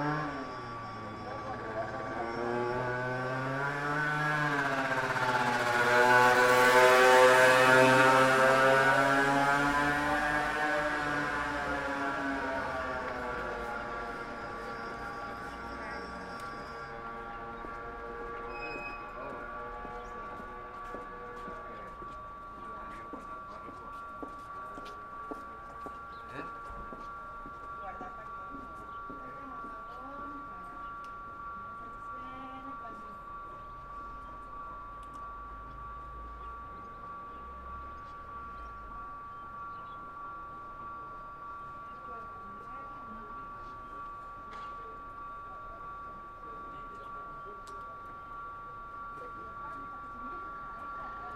Burjasot, Valencia, España - metro en superficie en Burjassot
Metro en superficie
Valencia, Spain